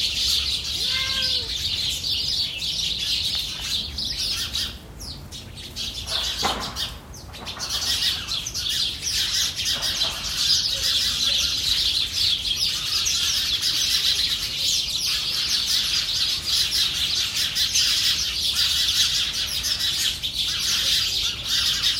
Rue Pascal Tavernier, Saint-Étienne, France - starling & cat
étourneaux (starling) dans un massif puis arrivée d'un chat. Deux promeneurs s'arrêtent et caressent le chat.
Enregistrement via Iphone SE puis normalisation avec Audacity
France métropolitaine, France